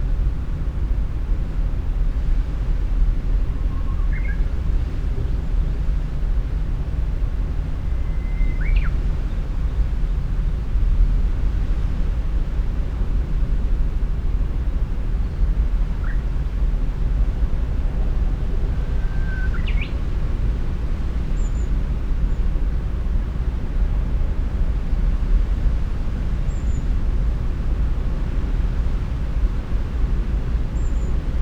호도_small island, small birds, strong swell, heavy shipping...
대한민국